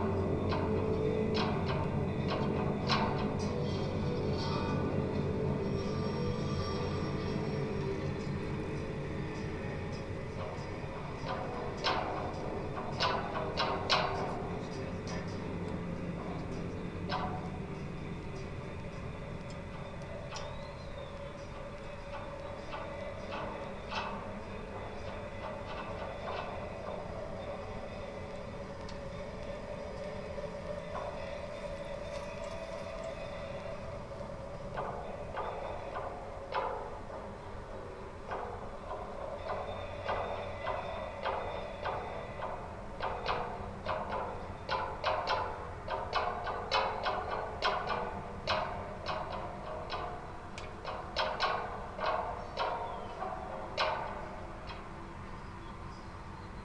amazing amalgam of sounds from a pedestrian suspension bridge on Princes Island Calgary Canada
21 April 2010, ~21:00